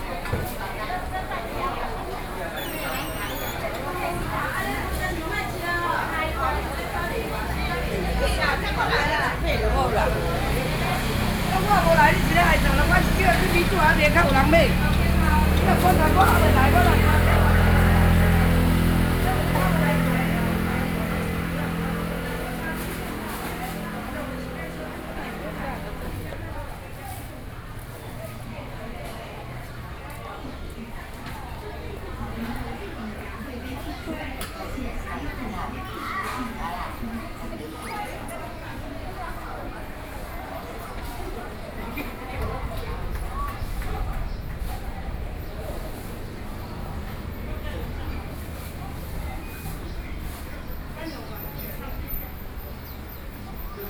Fude St., 金山區和平里 - Walking in a small alley
Walking through the traditional market, Walking in a small alley
Sony PCM D50+ Soundman OKM II